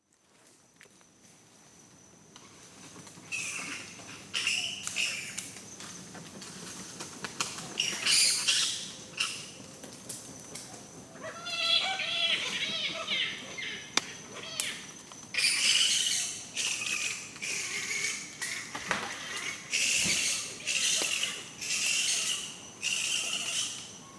Bats making a racket in a small reserve in a residential area of Cremorne..the percussive sounds are their droppings/fruit etc falling to the ground from the treetops... DPA 4060 pair into Earthling Designs (custom) preamps, Zoom H6.. slight EQ roll off to remove some city rumble
Cremorne Point NSW, Australia, 11 January 2017